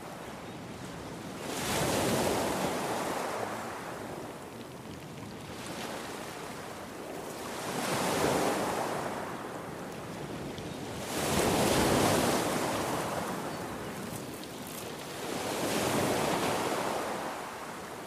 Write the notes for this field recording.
The waves of the eastern rocky beach of Niaqornat on a moderately windy day. Recorded with a Zoom Q3HD with Dead Kitten wind shield.